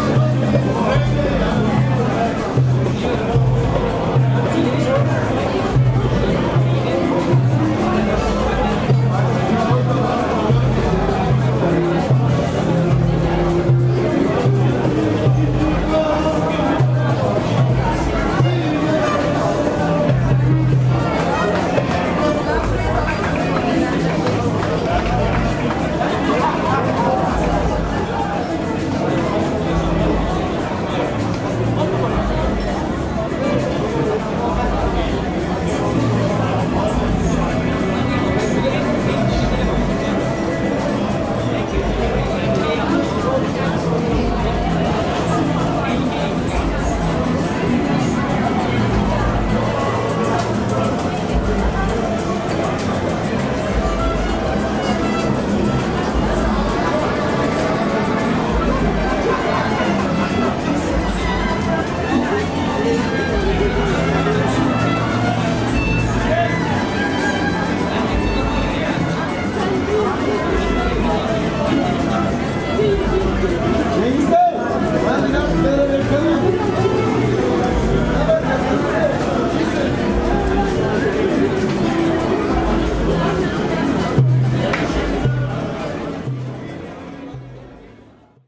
Istanbul, Besşiktaş, dining out
The turkish word KALABALIK is one of the most frequnt ones in use anywhere in Istanbul. It means crowds, accumulation of people, masses, swarming. Here we hear an example of a kalabalık on a thursday night, dining out in Beşiktaş.
23 September 2010, ~22:00